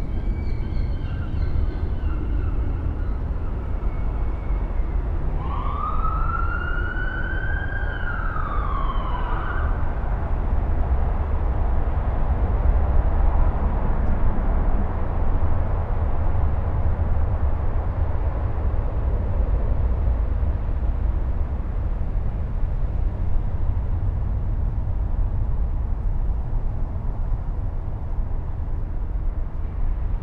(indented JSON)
{
  "title": "Crossland Rd, Reading, UK - Reading Quaker Meeting House Graveyard",
  "date": "2017-11-08 12:40:00",
  "description": "A ten minute meditation in the graveyard behind the Quaker Meeting House in Reading. (Sennheiser 8020s spaced pair with SD MixPre6)",
  "latitude": "51.45",
  "longitude": "-0.97",
  "altitude": "44",
  "timezone": "Europe/London"
}